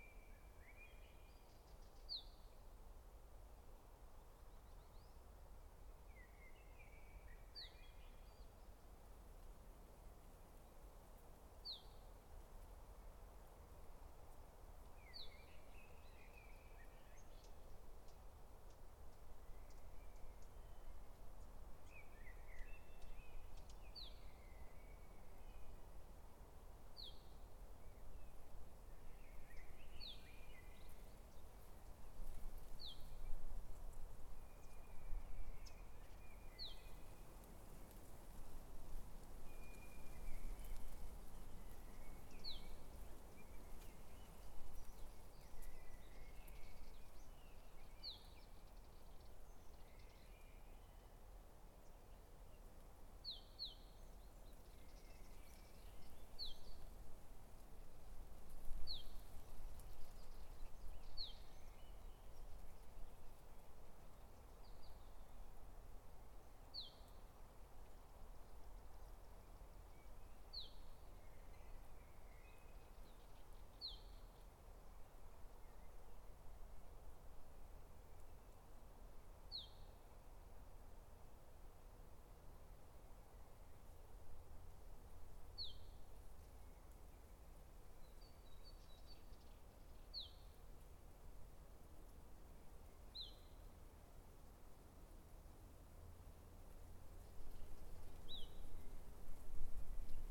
Nes Harim, Israel - Lazy spring noon time - sunny, wind comes and goes, some birds and insects active
Lazy spring noon time at a vineyard hidden between the mountains - its sunny and hot, wind comes and goes, some birds and insects are active. it seems like the world was resting for a moment. Recorded with Roland R-05.
9 April 2022, 12:49